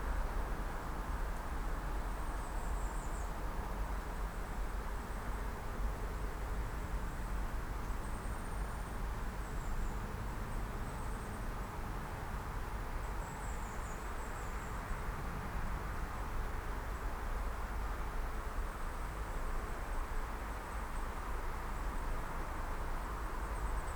forest near Bonaforth, Deutschland. Tunnel under railway, shotguns - Tunnel under railway - shotguns
small tunnel under railroad with strange resonance, 35 meters long, 1,5 meter wide, at one end 3 meters high, other end 1.6 meters high. Recorded using 2 shotgun microphones: right channel at one end pointing to the forest, left channel at the other end pointing inside the tunnel.